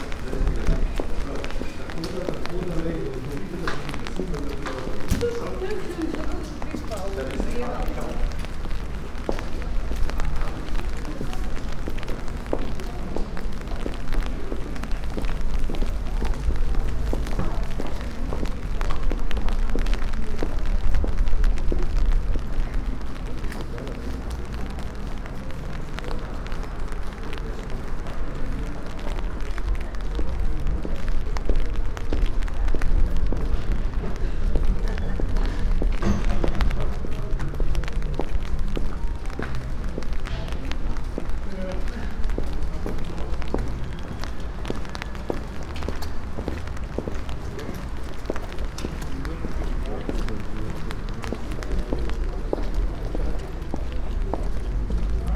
Stari trg, Ljubljana - raindrops on umbrella, walking downtown streets in Ljubljana
Ljubljana, Slovenia, 4 March 2015, ~18:00